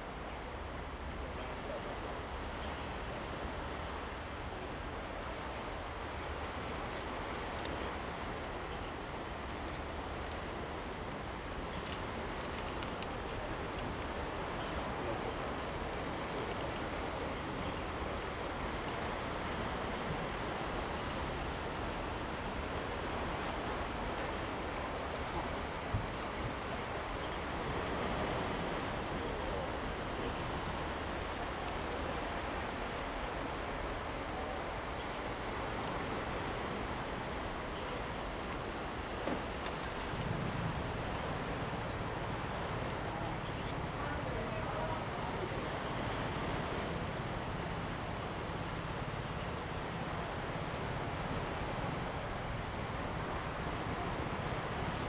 本福村, Hsiao Liouciou Island - Waves and tides
Waves and tides, Birds singing
Zoom H2n MS+XY